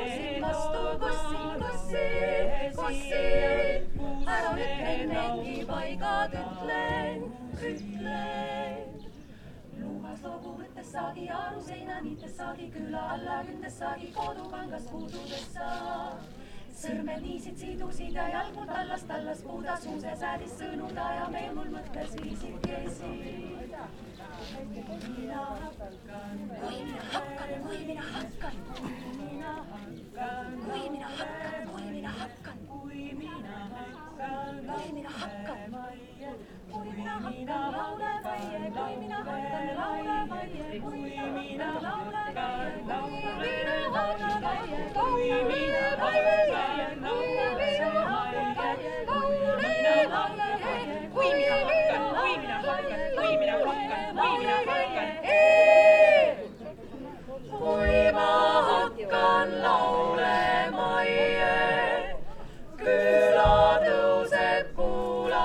{"title": "estonia, mooste, folk singers - women choir", "date": "2011-04-23 14:20:00", "description": "open stage at mooste theater, outside. women choir singing", "latitude": "58.16", "longitude": "27.20", "altitude": "48", "timezone": "Europe/Tallinn"}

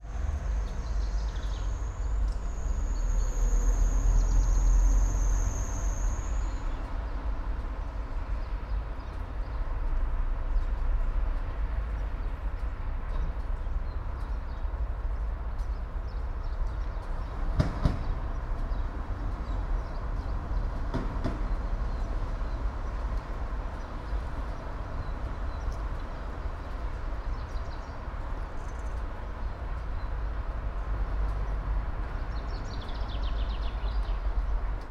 {"title": "all the mornings of the ... - mar 1 2013 fri", "date": "2013-03-01 09:31:00", "latitude": "46.56", "longitude": "15.65", "altitude": "285", "timezone": "Europe/Ljubljana"}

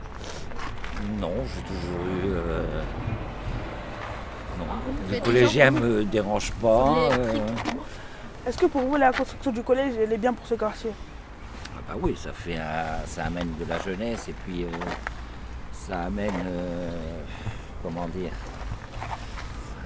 {"title": "Quai de Rouen, Roubaix, France - Léquipe dentretien du canal", "date": "2019-05-07 11:30:00", "description": "Interview de Didier qui travaille à l'entretien et au nettoyage du canal", "latitude": "50.70", "longitude": "3.19", "altitude": "32", "timezone": "GMT+1"}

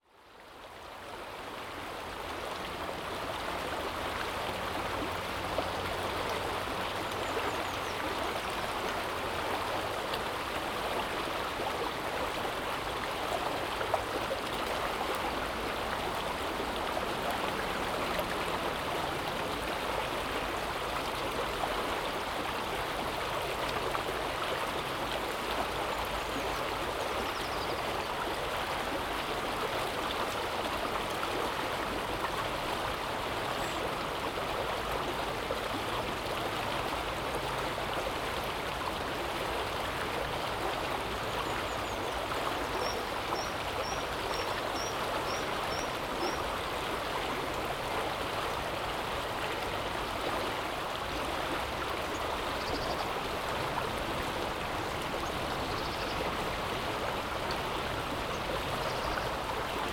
{"title": "Corona-Schröter-Weg, Weimar, Deutschland - Geophony: Park an der Ilm, Weimar.", "date": "2021-05-07 15:10:00", "description": "An example of Geophony: All sounds of the earth for example, winds blowing, and waves crushing.\nDate: 07.05.2021.\nTime: Between 3 and 5 PM.\nRecording Format: Binaural.\nRecording Gear: Soundman OKM into ZOOM F4.\nWe also have a focus in Multimedia Installations and Education.", "latitude": "50.98", "longitude": "11.34", "altitude": "214", "timezone": "Europe/Berlin"}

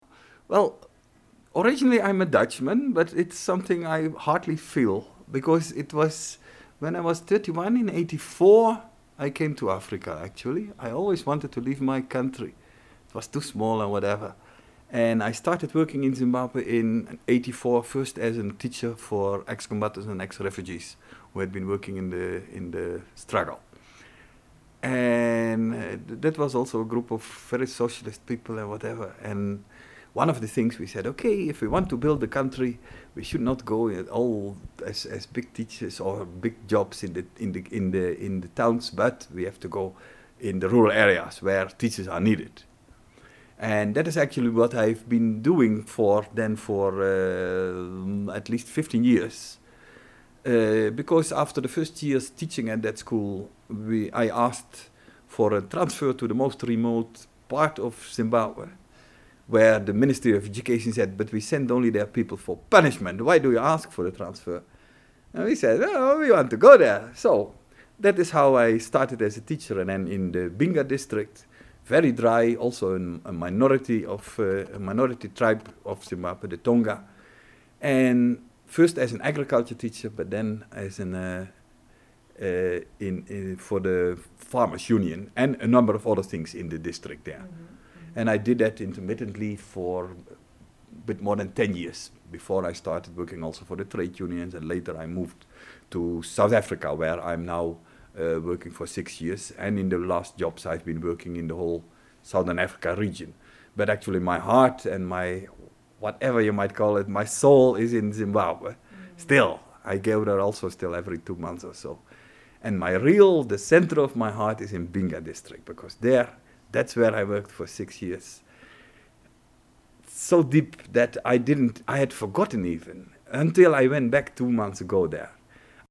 {"title": "Office of Rosa Luxemburg Foundation, Johannesburg, South Africa - Jos Martens the centre of my heart is in Binga…", "date": "2010-04-28 17:30:00", "description": "We recorded this interview in Jos’s office at the end of the day. It’s as quiet as it can be in the empty offices. I had just arrived in Johannesburg from Durban, and was flying out to Nairobi in a couple of days, perhaps I’d also travel to Zimbabwe; but I didn’t yet know… (in fact, this was two happen two year later….). Jos had recently re-visited Binga after many years; and after having worked in the Zambezi valley during the 1980s and 90s. I had asked him to tell his story of his work in Binga in the light of his fresh encounters and experiences…\nJos, a teacher, and expert in Agriculture, was instrumental in the establishment of trade unions for farmers in the Binga district. He was also involved as he tells here – as the only “non-Tonga” – in the Tonga Development Association, a local advocacy initiative and organizational predecessor of the Basilwizi Trust.\nJos Martens is now the deputy head of the Rosa Luxemburg Foundation in Johannesburg.", "latitude": "-26.14", "longitude": "28.03", "altitude": "1663", "timezone": "Africa/Johannesburg"}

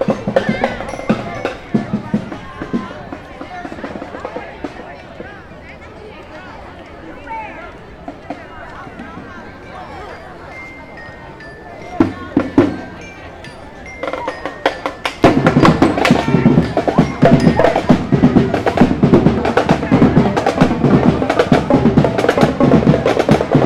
{"title": "Washington Park, South Doctor Martin Luther King Junior Drive, Chicago, IL, USA - drums at end of parade route", "date": "2013-08-10 13:30:00", "latitude": "41.79", "longitude": "-87.61", "altitude": "181", "timezone": "America/Chicago"}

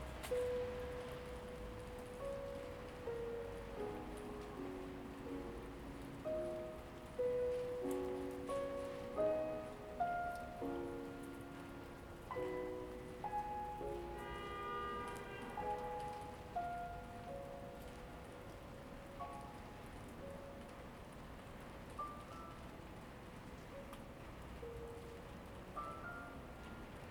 {"date": "2020-12-28 11:44:00", "description": "\"Winter high noon with Des pas sur la neige and final plane in the time of COVID19\": soundscape.\nChapter CL of Ascolto il tuo cuore, città. I listen to your heart, city\nMonday December 28th 2020. Fixed position on an internal terrace at San Salvario district Turin, more then six weeks of new restrictive disposition due to the epidemic of COVID19.\nStart at 11:44 a.m. end at 00:21 p.m. duration of recording 40’53”", "latitude": "45.06", "longitude": "7.69", "altitude": "245", "timezone": "Europe/Rome"}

